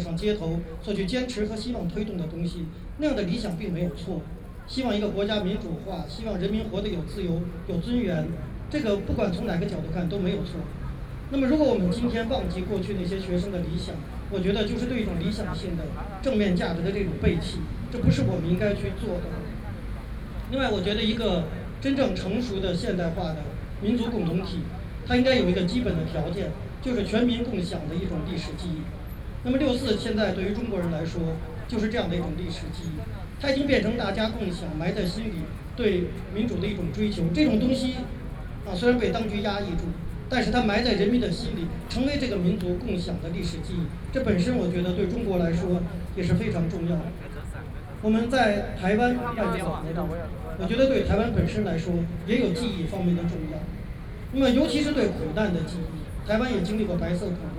Wang Dan, a leader of the Chinese democracy movement, was one of the most visible of the student leaders in the Tiananmen Square protests of 1989., Sony PCM D50 + Soundman OKM II